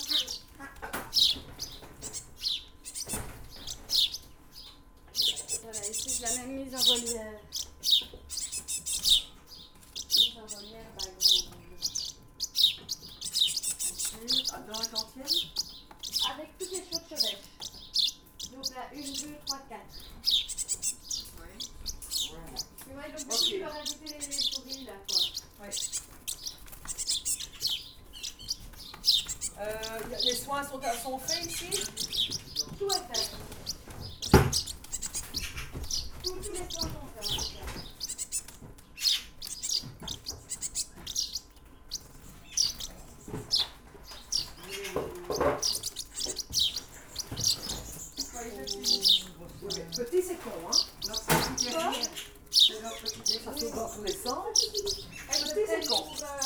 Ottignies-Louvain-la-Neuve, Belgique - Birdsbay, hospital for animals
Birdsbay is a center where is given revalidation to wildlife. It's an hospital for animals. In this recording, nothing special is happening, the recorder is simply disposed in a cage. You can hear juvenile tit, very juvenile blackbird and juvenile sparrow. At the backyard, some specialists put bats in transportation cages.
Ottignies-Louvain-la-Neuve, Belgium, 18 July 2016, 19:30